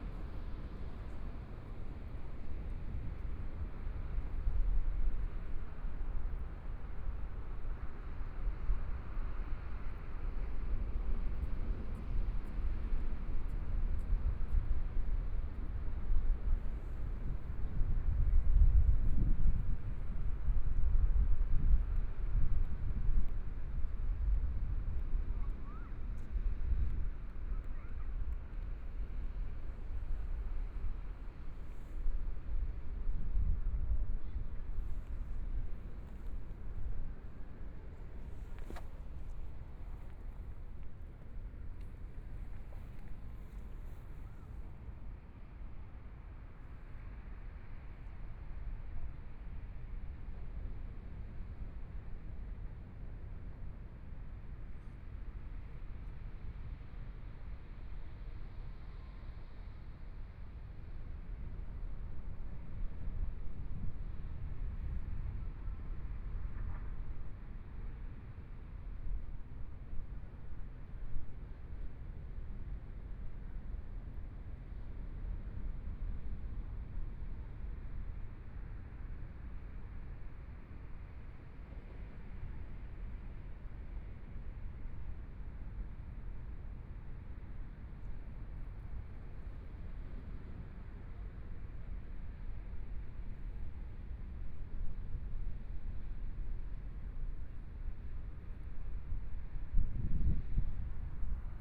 In the sea embankment, Sound of the waves, Dogs barking, Traffic Sound Binaural recordings, Zoom H4n+ Soundman OKM II